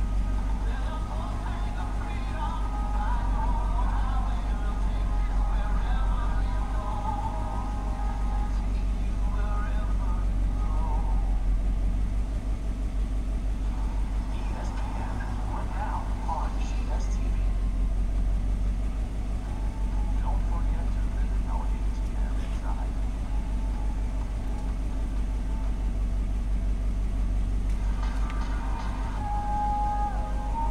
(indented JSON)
{
  "title": "Pleasanton Hwy, Bear Lake, MI USA - Refueling, Video Reverb & Snowmobile Roaring",
  "date": "2016-02-13 23:15:00",
  "description": "From pump 1, on the north side of the gas station, a video loop reverberates across the property as it issues forth from the other pumps' monitors. All is drowned out by the arrival and departure of snowmobiles. Boisterous young men can be heard. Other vehicles come, refuel and go. A late Saturday night at Saddle Up Gas & Grocery, on the east side of Bear Lake. Stereo mic (Audio-Technica, AT-822), recorded via Sony MD (MZ-NF810, pre-amp) and Tascam DR-60DmkII.",
  "latitude": "44.43",
  "longitude": "-86.13",
  "altitude": "237",
  "timezone": "America/Detroit"
}